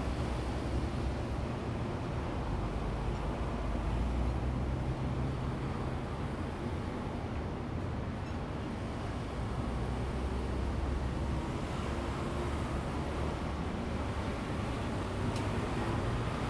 Jianguo E. Rd., Taoyuan Dist. - Railroad Crossing
Next to the railroad track, Cicada and Traffic sound, The train runs through
Zoom H2n MS+XY